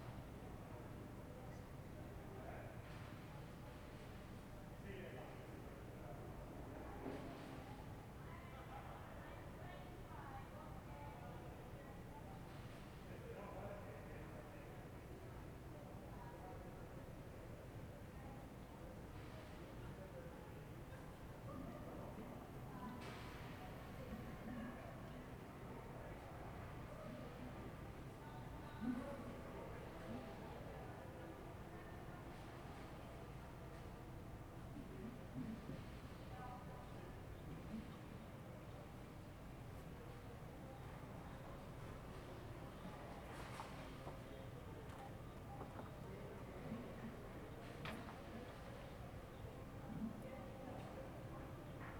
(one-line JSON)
{"title": "Ascolto il tuo cuore, città. I listen to your heart, city. Several chapters **SCROLL DOWN FOR ALL RECORDINGS** - Night with Brian Eno video in background in the time of COVID19 Soundscape", "date": "2020-11-11 22:41:00", "description": "\"Night with Brian Eno video in background in the time of COVID19\" Soundscape\nChapter CXL of Ascolto il tuo cuore, città. I listen to your heart, city\nWednesday November 11th 2020. Fixed position on an internal terrace at San Salvario district Turin, fifth day of new restrictive disposition due to the epidemic of COVID19.\nOn the terrace I was screening video “Brian Eno - Mistaken Memories Of Mediaeval Manhattan”\nStart at 10:41 p.m. end at 11:06 p.m. duration of recording 25’05”", "latitude": "45.06", "longitude": "7.69", "altitude": "245", "timezone": "Europe/Rome"}